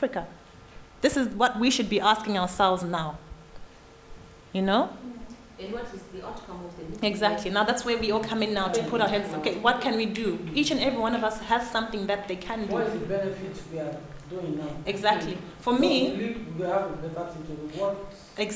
VHS, Hamm, Germany - Everyone has something to give...
Yvonne's speech: how can we empower ourselves as women....?!
Yvonne Makopa is board member of Yes-Afrika e.V.
July 2014